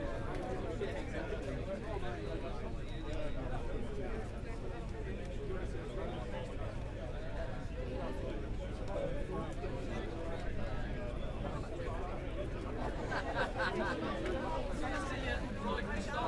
Glenshire, York, UK - Motorcycle Wheelie World Championship 2018 ...
Motorcycle Wheelie World Championship 2018 ... Elvington ... pit lane prior to the riders briefing ... lavalier mics clipped to baseball cap ...